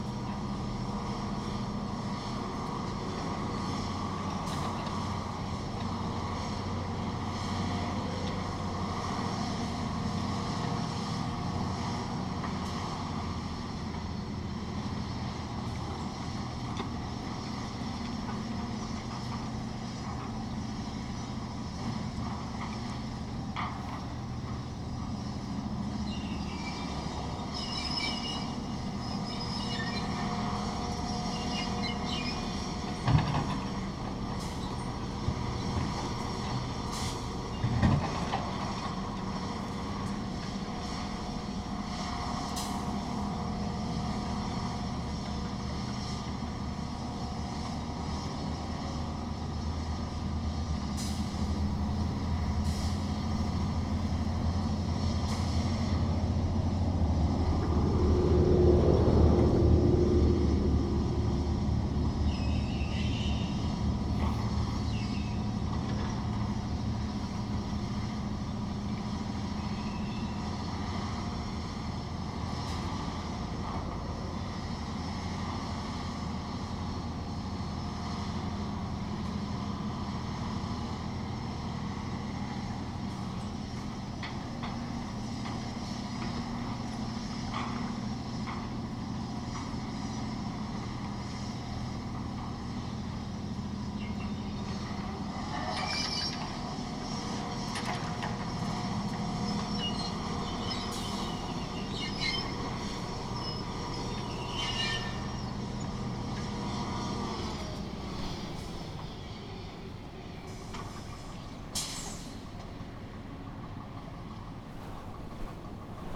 excavator unloads a sand barge
the city, the country & me: june 22, 2015
Workum, Netherlands, 22 June 2015